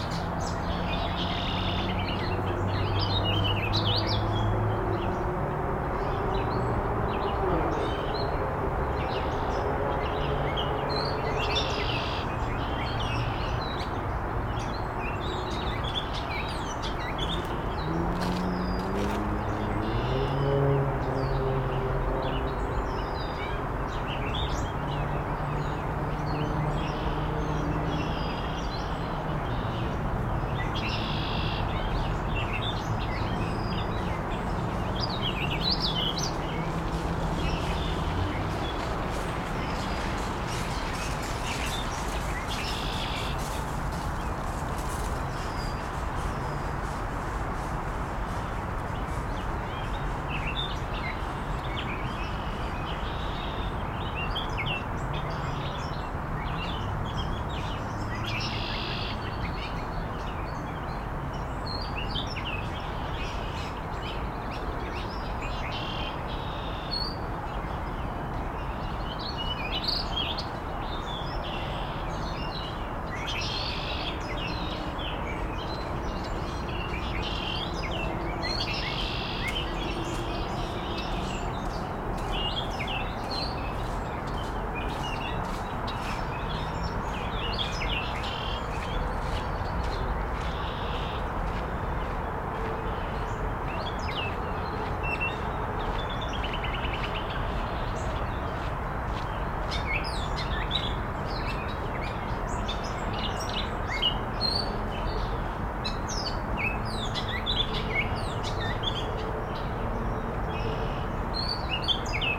Vermont Pl, Brooklyn, NY, USA - Ridgewood Reservoir Soundscape
Ridgewood Reservoir soundscape.
Zoom H6
United States